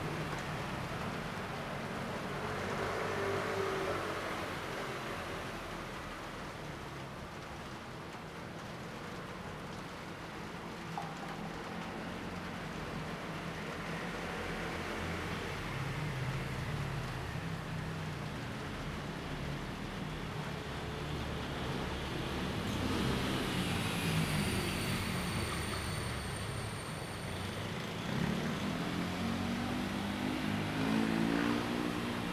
大仁街, Tamsui District - Thunderstorm coming
Thunderstorm coming
Zoom H2n Spatial audio
New Taipei City, Taiwan, June 2016